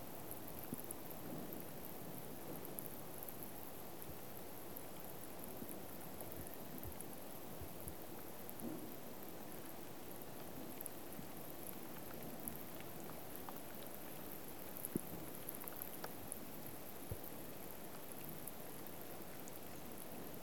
Parve, Neeruti, Valga maakond, Estonia - Soft rain drops over lake
A very faint rain was falling over the surface of the lake. The Zoom H4N Pro mic was held horizontally almost touching the water